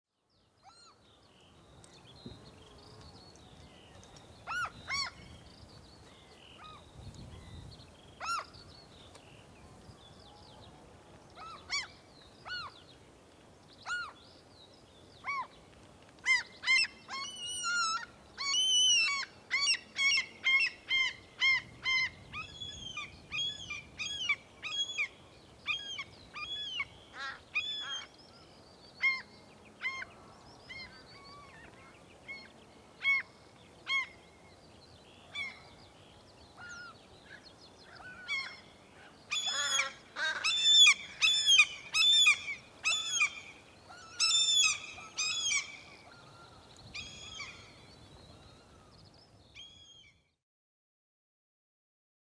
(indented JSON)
{
  "title": "gulls near boat harbour in Puise, Estonia",
  "description": "Gulls, wind, skylark, Estonia, Matsalu, Puise",
  "latitude": "58.77",
  "longitude": "23.45",
  "altitude": "3",
  "timezone": "Europe/Tallinn"
}